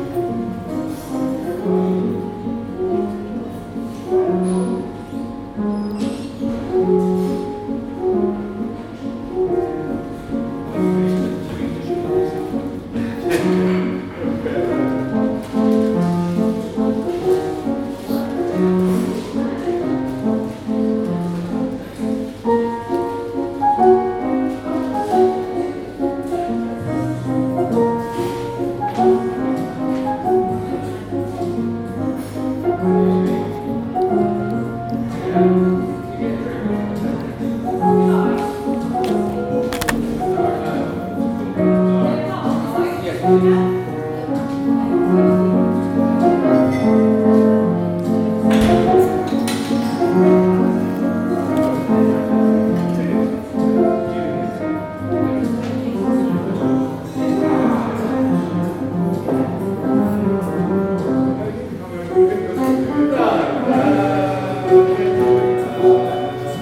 Newport, Isle of Wight, UK - cafe noise with piano
arts centre cafe, piano playing, conversation and crockery